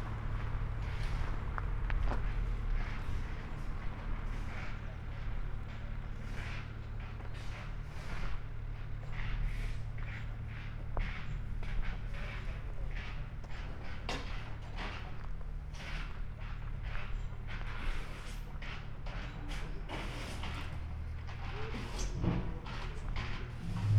strolling around in Mariánské Radčice village (Sony PCM D50, Primo EM172)